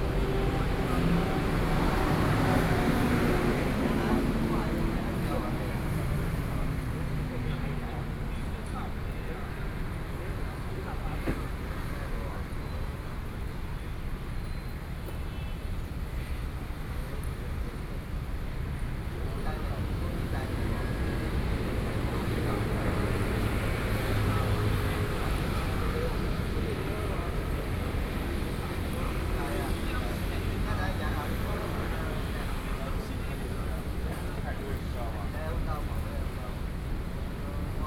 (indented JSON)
{
  "title": "Sung Chiang road - Sitting on the roadside",
  "date": "2012-10-09 15:23:00",
  "latitude": "25.04",
  "longitude": "121.53",
  "altitude": "12",
  "timezone": "Asia/Taipei"
}